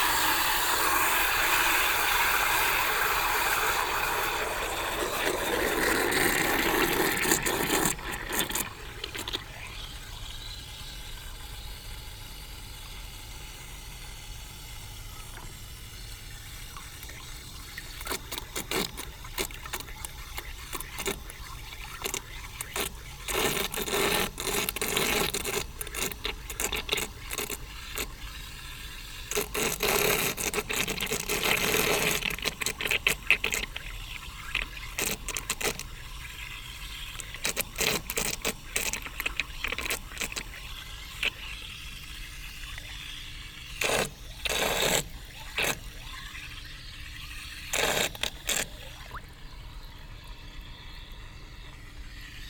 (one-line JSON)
{"title": "wasserorchester, wassereimer befüllen", "description": "befüllen eines Wassereimers für das H2Orchester\nweitere Informationen unter", "latitude": "52.43", "longitude": "10.80", "altitude": "62", "timezone": "GMT+1"}